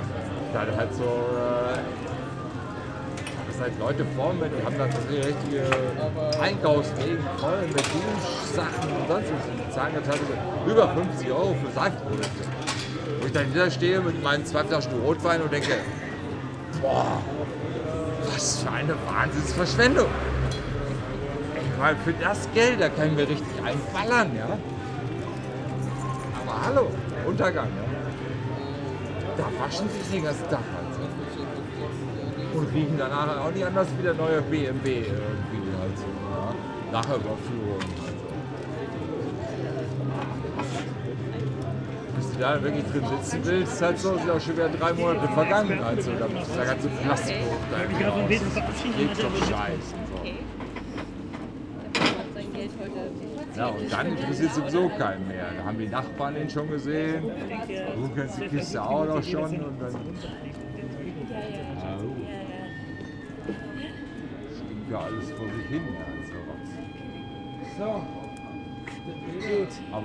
berlin, ohlauer straße: vor club - the city, the country & me: deodorant loather
drunken guy explains his deodorant philosophy
the city, the country & me: june 27, 2010
Berlin, Germany, 27 June 2010